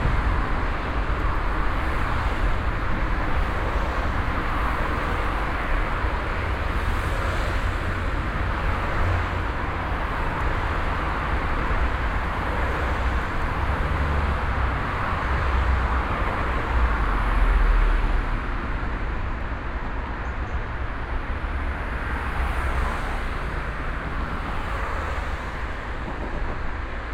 essen, berne street, traffic tunnel
inside a traffic tunnel - the tube reflection of the passing traffic in the early afternoon
Projekt - Klangpromenade Essen - topographic field recordings and social ambiences
Essen, Germany